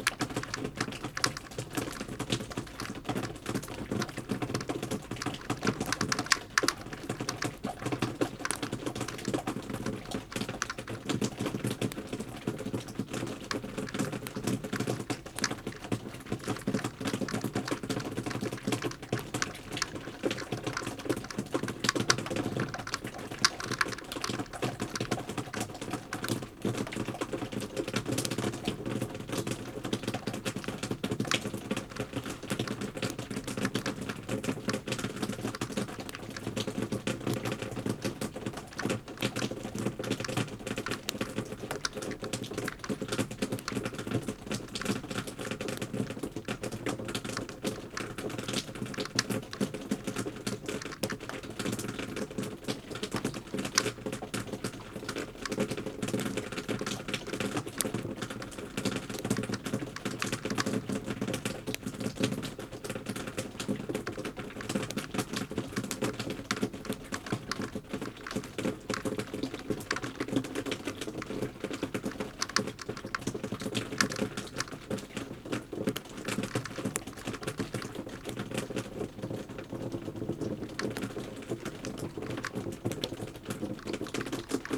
berlin, friedelstraße: hinterhof - the city, the country & me: backyard
melt water dripping from the roof
the city, the country & me: january 28, 2013
Berlin, Deutschland, European Union, 28 January 2013, 01:41